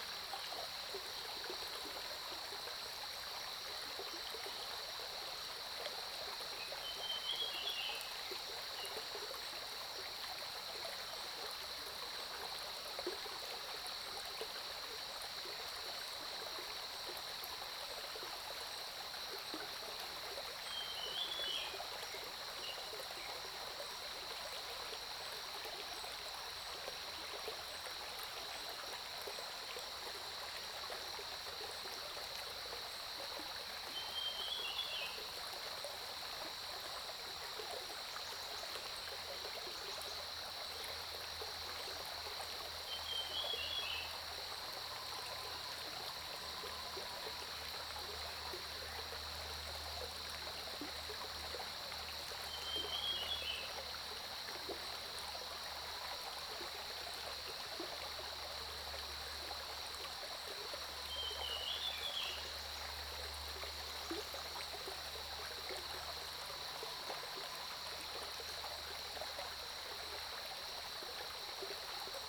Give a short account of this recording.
Early morning, Bird calls, Brook, Zoom H2n MS+XY